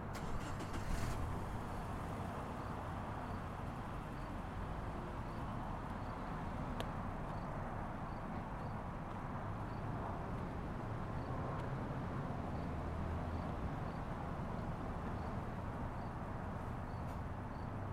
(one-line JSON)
{"title": "S 25th St, Colorado Springs, CO, USA - Old Colorado City Post Office", "date": "2018-05-14 17:30:00", "description": "Zoom H4n Pro, dead cat used. Flag in the wind.", "latitude": "38.85", "longitude": "-104.86", "altitude": "1857", "timezone": "America/Denver"}